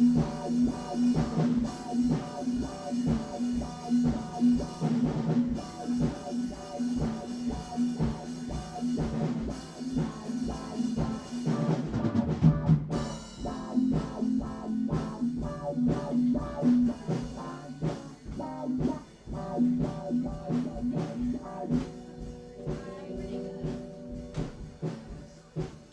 Qualla Dr. Boulder, CO - EggHouse